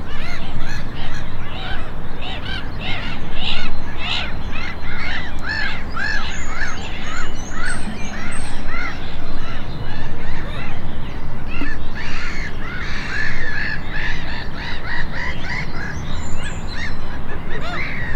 Mythology Park pond, Zator, Poland - (756 XY) Birds at the pond
Stereo recording of mostly black-headed gulls at Mythology park pond.
Recorded with Rode NT4 on Sound Devices MixPre 6 II.
powiat oświęcimski, województwo małopolskie, Polska, 18 April 2021